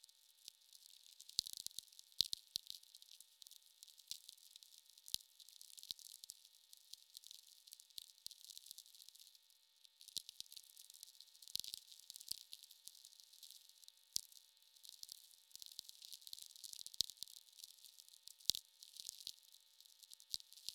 County Rd 510 S, Morgantown, IN, USA - VLF atmos distances, late evening
atmospheric "births" of tweeks, pings, and clicks through ionosphere in the countryside .. distant hums of noise floor reacting.
Indiana, United States of America, 21 August 2020